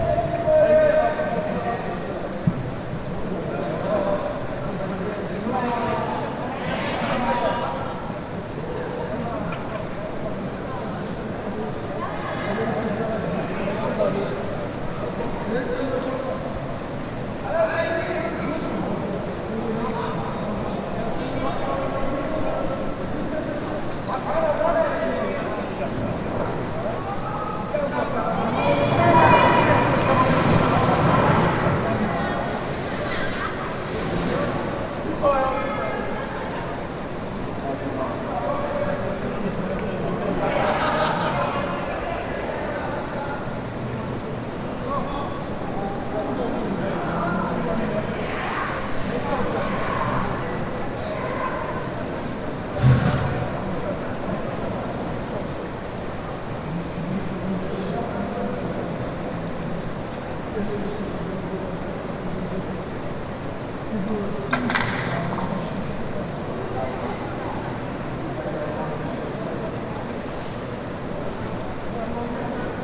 Soundscape Atelier Egon Schiele Art Centrum (3)
Český Krumlov, Czech Republic, 30 August 2012